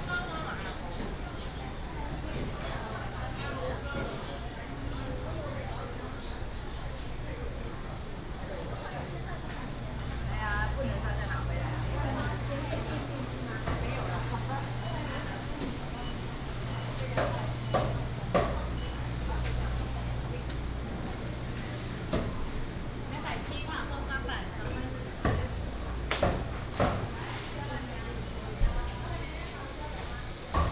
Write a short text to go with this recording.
vendors peddling, Traditional Markets, Binaural recordings, Sony PCM D100+ Soundman OKM II